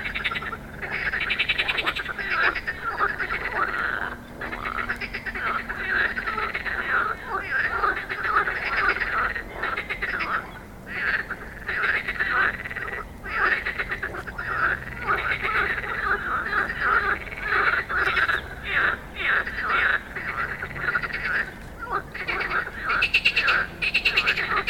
Frogs in the night, Zoom H4n Pro